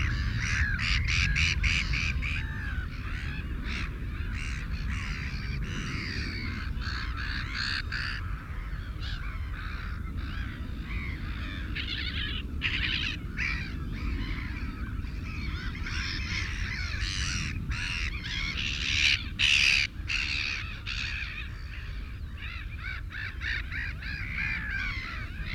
Stone Cottages, Woodbridge, UK - Belpers Lagoon soundscape ...
Belper's Lagoon soundscape ... RSPB Havergate Island ... fixed parabolic to cassette recorder ... birds calls ... song ... black-headed gull ... herring gull ... canada goose ... shelduck ... avocet ... redshank ... oystercatcher ... ringed plover ... lapwing ... linnet ... meadow pipit ... much background noise ... from planes and boats ...
13 April 2004